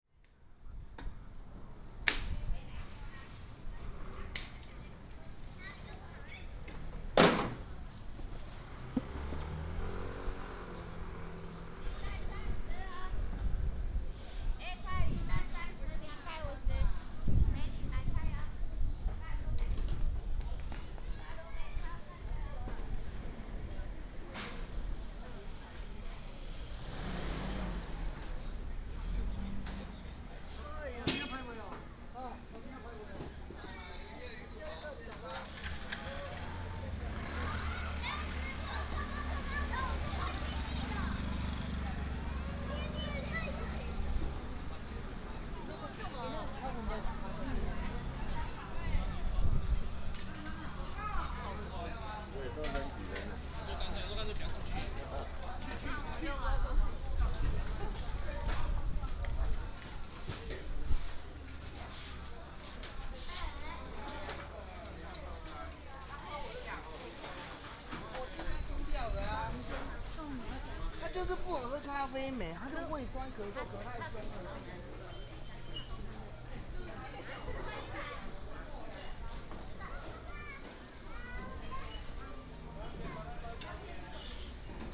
Ren’ai St., Zhubei City - Small market
Small market, alley, Is preparing to pack
Zhubei City, Hsinchu County, Taiwan, February 2017